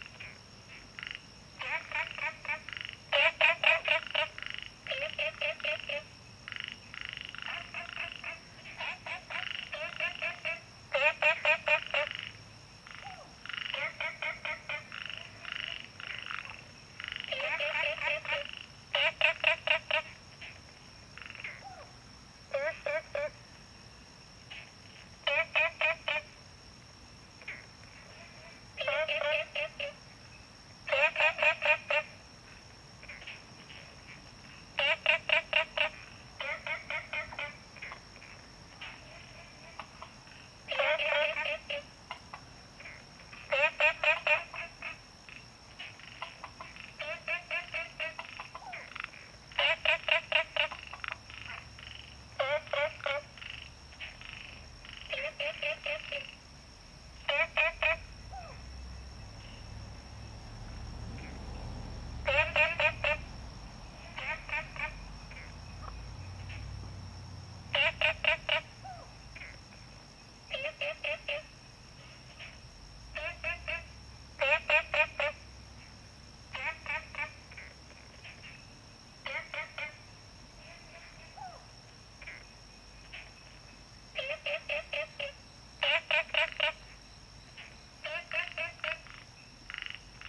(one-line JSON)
{"title": "青蛙ㄚ婆ㄟ家民宿, Puli Township, Nantou County - A variety of chirping frogs", "date": "2016-05-17 21:44:00", "description": "Ecological pool, A variety of chirping frogs\nZoom H2n MS+XY", "latitude": "23.94", "longitude": "120.94", "altitude": "463", "timezone": "Asia/Taipei"}